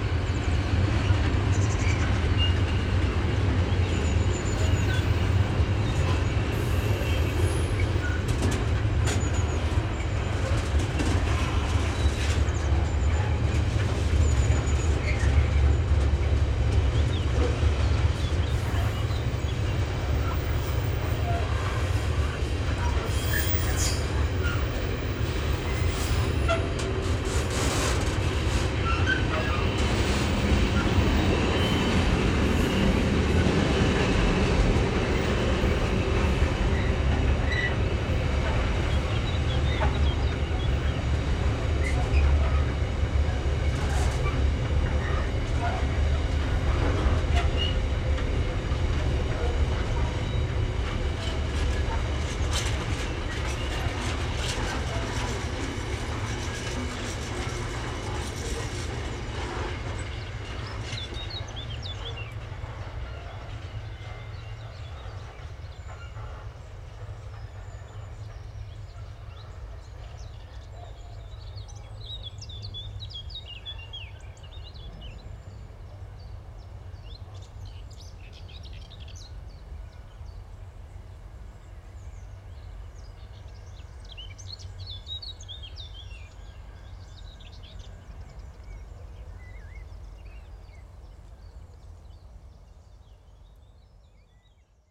Milkmaids Bridge UK - Freight Train, Long Tailed Tits and Whitethroats
I went to try out my new toy and where better than where trains pass from right to left. MixPre 6 11 with AB Pluggies set 2 feet apart.
England, United Kingdom, 8 July 2021